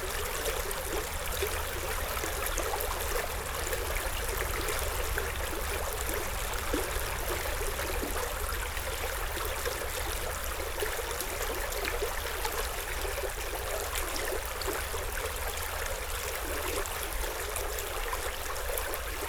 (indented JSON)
{
  "title": "Court-St.-Étienne, Belgique - Thyle river",
  "date": "2016-09-05 10:29:00",
  "description": "The Thyle river flowing, and a train quickly passing by.",
  "latitude": "50.61",
  "longitude": "4.54",
  "altitude": "83",
  "timezone": "Europe/Brussels"
}